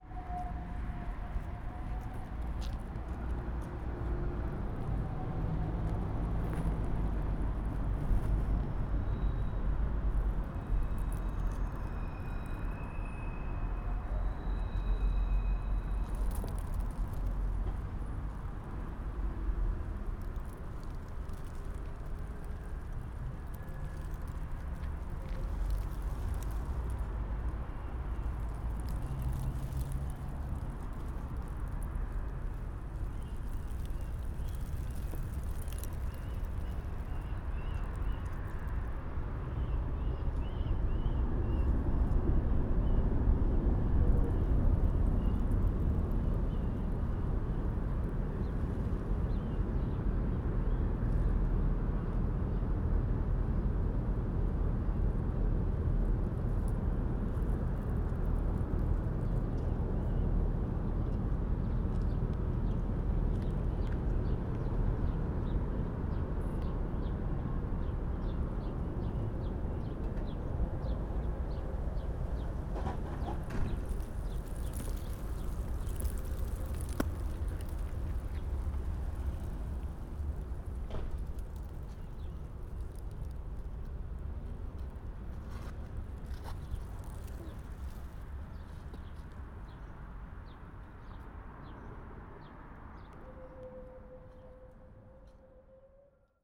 Kopli, Tallinn, Estonia - Balti Jaam
Dry leaves rustle at the tramway stop near Balti Jaam.